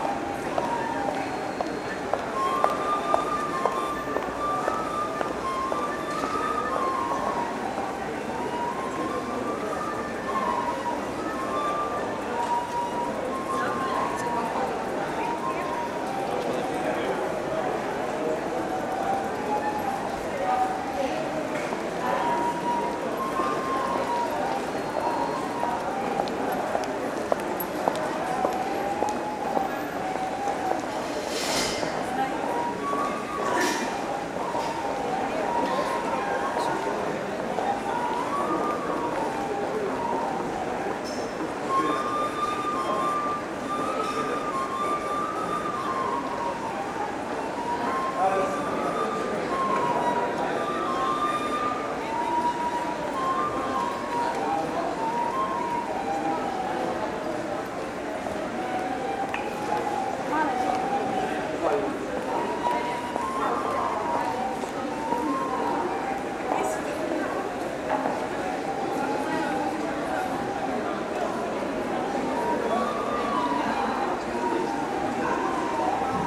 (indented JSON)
{
  "title": "Skopje, Gradski Trgovski Centar - Flute Busker",
  "date": "2014-10-13 17:14:00",
  "description": "Man playing a traditional flute in Gradski Trgovski Centar, Skopje.\nBinaural recording.",
  "latitude": "41.99",
  "longitude": "21.43",
  "altitude": "252",
  "timezone": "Europe/Skopje"
}